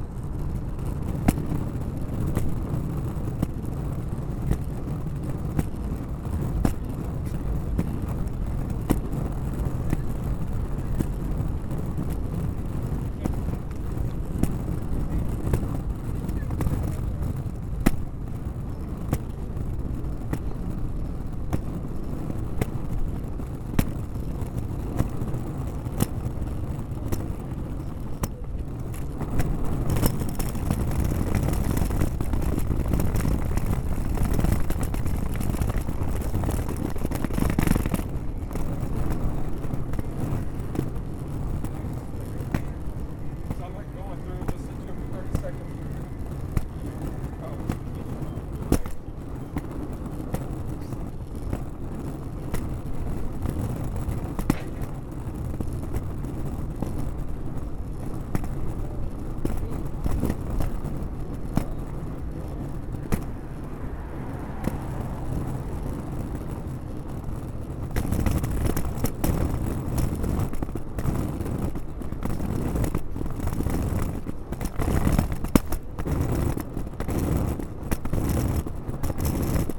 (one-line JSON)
{"title": "Red River St, Austin, TX, USA - USA Luggage Bag Drag #10 (Night)", "date": "2019-10-01 12:59:00", "description": "Recorded as part of the 'Put The Needle On The Record' project by Laurence Colbert in 2019.", "latitude": "30.27", "longitude": "-97.74", "altitude": "148", "timezone": "America/Chicago"}